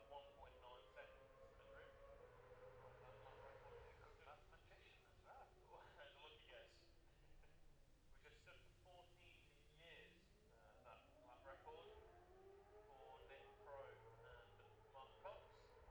Jacksons Ln, Scarborough, UK - gold cup 2022 ... sidecar practice ...
the steve henshaw gold cup 2022 ... sidecar practice ... dpa 4060s on t'bar on tripod to zoom f6 ...